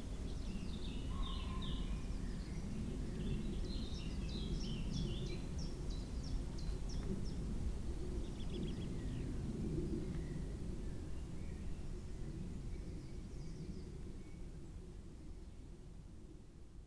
At the edge of Warburg Nature Reserve, Nettlebed, Oxfordshire, UK - Songbird, pheasants, aeroplane, rain
I was walking out of the Warburg Nature Reserve, where I had gone to listen. As I passed into an area with a clearing on the right, I heard the most beautiful bird song. I am not sure what bird this is, but I think it is from the songbird family? Perhaps some kind of Thrush? Its voice was being amplified beautifully by the shape of the space, the tree trunks, and the open cavern created by the clearing. I could hear pheasants distantly, too, and at some point there was a light rain. Just a dusting of it. After shuffling around to find the exact right place to stand and listen, I settled into a stillness, and was so quiet that a tiny mouse emerged from the ground near to me and began to bustle in the bushes. There we were, mouse, birds, planes, rain, space. Beautiful.